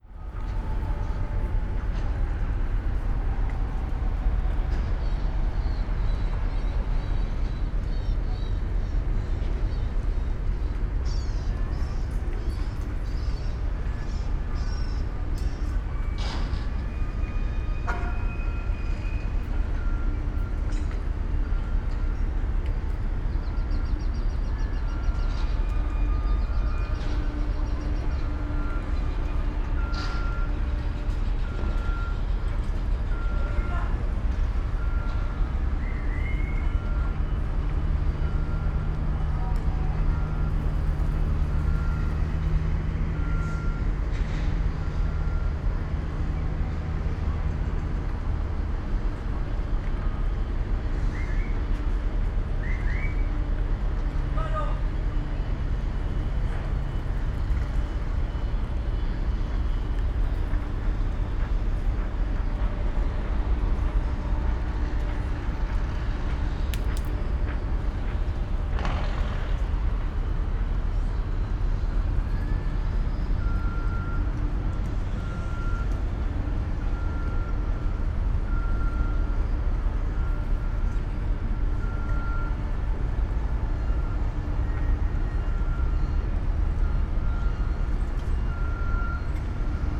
Puerto, Valparaíso, Chile - harbour ambience
It's difficult to access the sea in Valparaiso, because of the harbour and military araeas. harbour ambience
(Sony PCM D50, DPA4060)